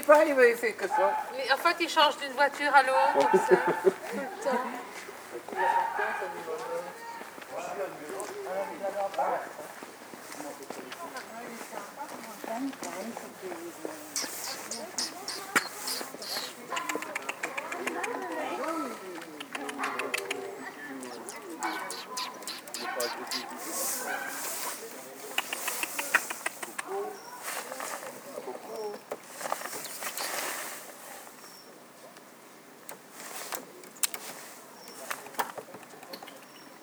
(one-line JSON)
{"title": "tondatei.de: wissant, rabe, leute", "date": "2010-12-31 15:00:00", "description": "tierlaute, krähe, fotoapparat, gemurmel", "latitude": "50.89", "longitude": "1.66", "altitude": "9", "timezone": "Europe/Paris"}